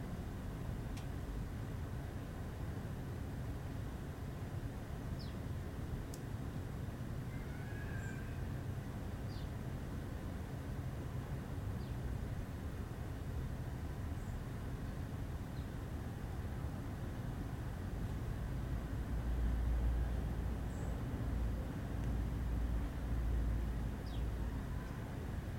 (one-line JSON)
{"title": "La Friche - Exterieur - Dimanche au Soleil / Extérieur Friche / Tentative", "date": "2012-05-27 11:12:00", "description": "Un dimanche au soleil", "latitude": "49.28", "longitude": "4.02", "altitude": "83", "timezone": "Europe/Paris"}